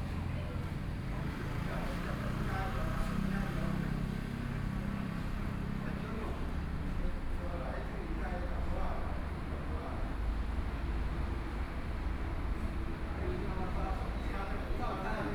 Funeral, Hot weather, Traffic Sound, Birdsong sound, Small village
Suao Township, Yilan County, Taiwan, 28 July, ~18:00